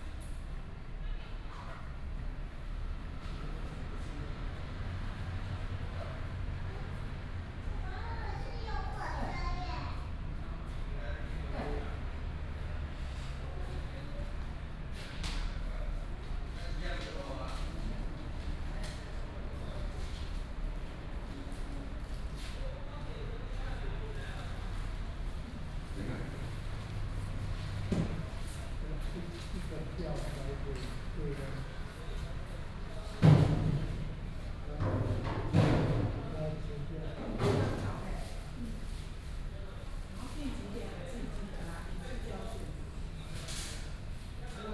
Zhudong Station, Zhudong Township - In the station hall
In the station hall
Hsinchu County, Taiwan, 17 January 2017